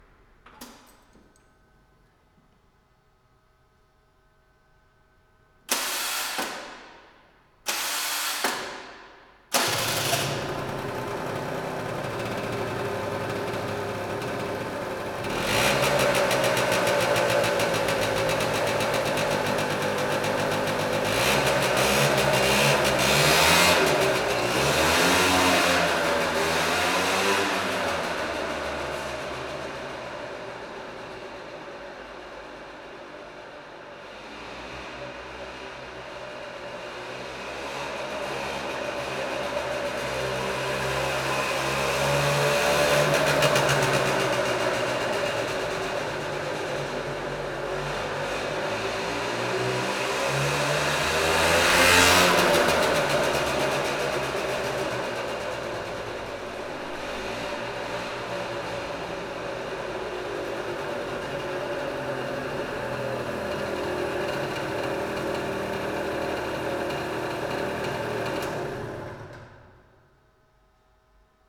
Poznan, underground parking lot - scooter
riding my scooter around the garage and the recorder. great, grainy, dense reverb.